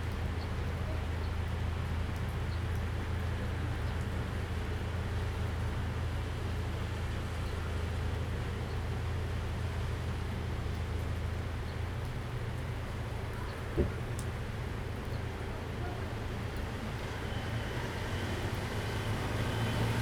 Datong Rd., Chenggong Township - Rain and Traffic Sound
Traffic Sound, The sound of rain, Thunder, In front of the convenience store
Zoom H2n MS+XY
Chenggong Township, Taitung County, Taiwan, 8 September 2014, 16:10